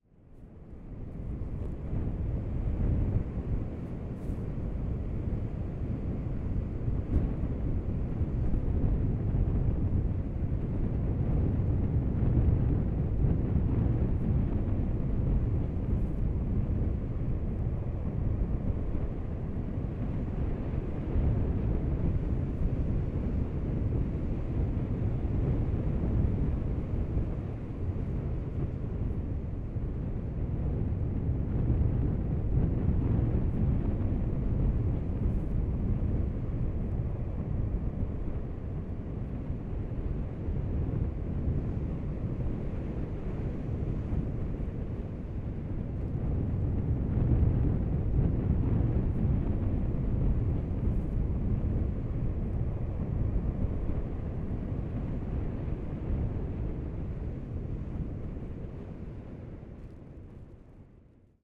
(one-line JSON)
{"title": "Pointe de Castel Erek, Pleumeur-Bodou, France - Heavy Wind from inside a raincoat [Île Grande]", "date": "2019-04-22 13:07:00", "description": "Journée. île grande. vent très fort entendu depuis l'interieur d'une veste.\nDay. île grande. heavy wind heard from inside a raincoat.\nApril 2019.\n/Zoom h5 internal xy mic", "latitude": "48.81", "longitude": "-3.59", "altitude": "7", "timezone": "Europe/Paris"}